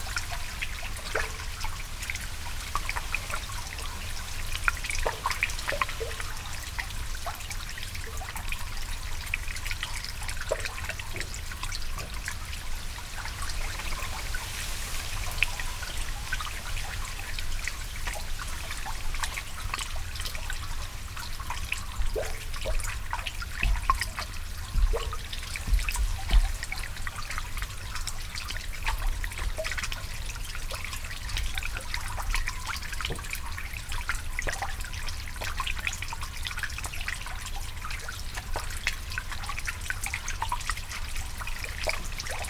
Westwood Marsh, United Kingdom - Melodic drips with reeds above and below water
Recorded 3 days later in exactly the same spot with the same normal and underwater mics, but with no wind. The drips are much more active and there is much less bass from the underwater mic than in the recording with strong wind. There is a small sluice at this place. The higher level water on one side easing over the barrier causes the drips whose sound is also audible under the surface.